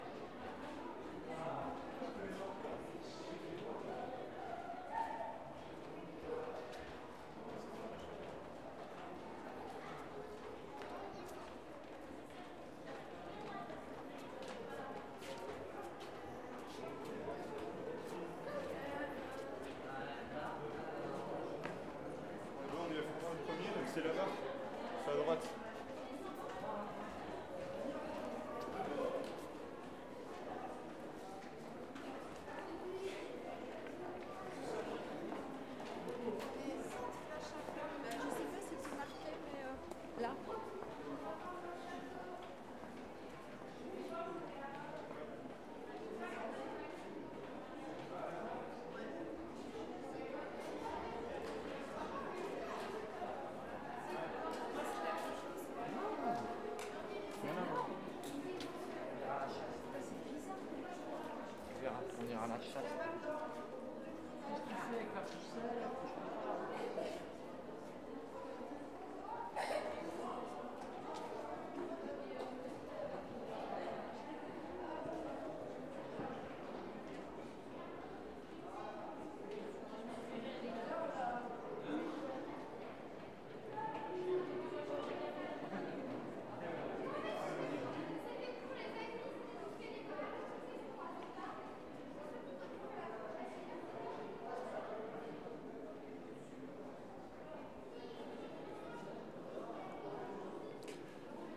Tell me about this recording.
Inside the Chateau de Chambord, near the staircase, end of the visits, crowed, by F Fayard - PostProdChahut, Tascam DR44